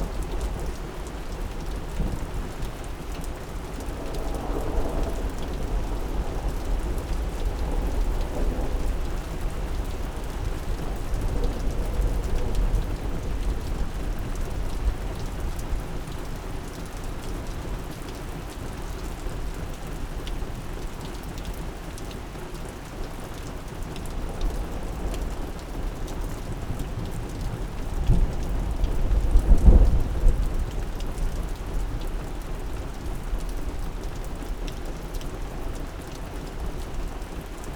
Thunderstorm and Heavy Rain - Malvern, Worcsestershire, UK
A thunderstorm with heavy rain that almost washed out my recording kit. Recorded with a Sound Devices Mix Pre 6 II and 2 Sennheisre MKH 8020s.